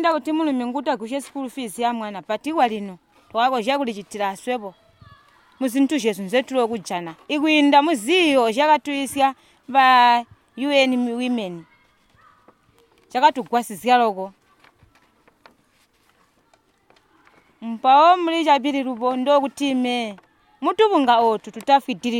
Chinonge, Binga, Zimbabwe - Chinonge Women's Forum presents...
...this is how it sounds when the women of Zubo's Chinonge Women’s Forum meet, present their project work to each other and discuss their activities in the community…
Zubo Trust is a women’s organization bringing women together for self-empowerment.